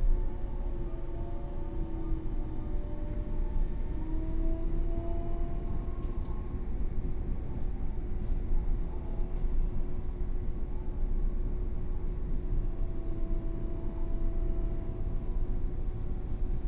Hsinchu City, Taiwan - Local Train
from Zhubei Station to Hsinchu Station, on the train, Sony Pcm d50, Binaural recordings